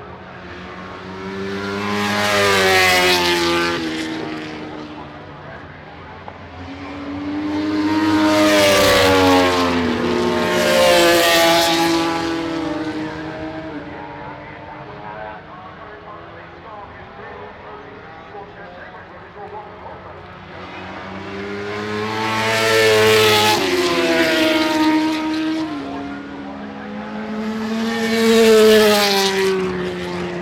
Unnamed Road, Derby, UK - British Motorcycle Grand Prix 2004 ... warm up ...
British Motorcycle Grand Prix 2004 ... warm up ... one point mic to minidisk ...
25 July